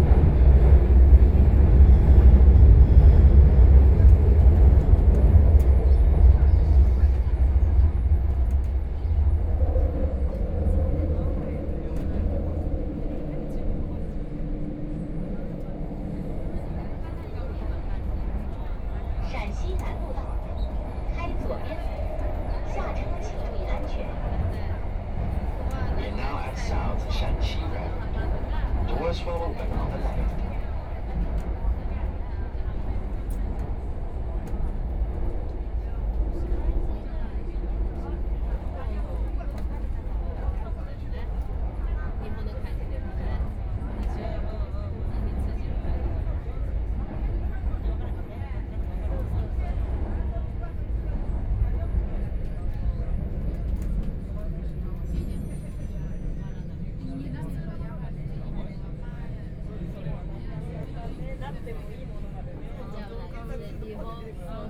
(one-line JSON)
{"title": "Huangpu District, Shanghai - Line 10 (Shanghai Metro)", "date": "2013-12-03 14:10:00", "description": "from Yuyuan Garden Station to South Shaanxi Road Station, Binaural recording, Zoom H6+ Soundman OKM II", "latitude": "31.22", "longitude": "121.46", "altitude": "9", "timezone": "Asia/Shanghai"}